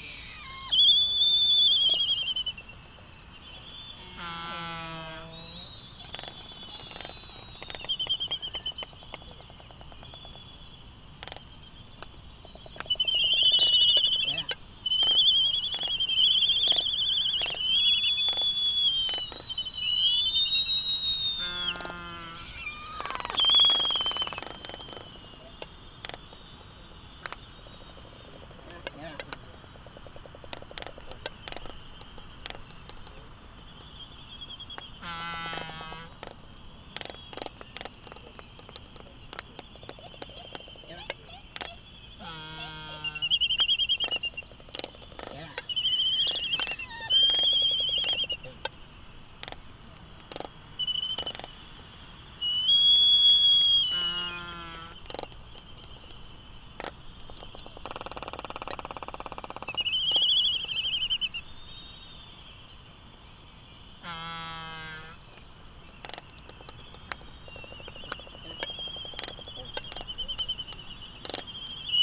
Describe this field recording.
Laysan albatross dancing ... Sand Island ... Midway Atoll ... bird calls ... laysan albatross ... canary ... open lavaliers on mini tripod ... background noise ... windblast ... and voices ...